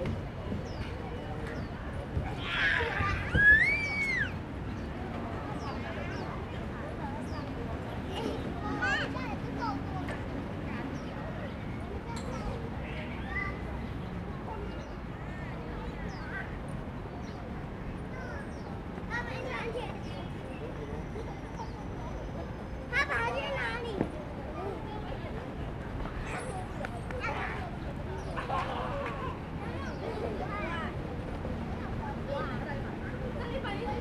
Sanmin Park - In the park
Kids are playing games, Old people playing chess chat, Sony ECM-MS907, Sony Hi-MD MZ-RH1 (SoundMap20120329- 30)